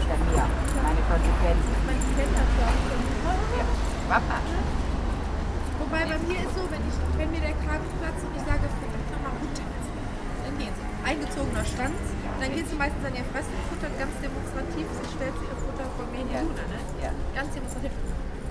cologne, aachenerstrasse, dog talk
zwei hundebesitzerinnen im gespräch, verkehrsgeräusche der aachenerstrasse, morgens
soundmap nrw: topographic field recordings - social ambiences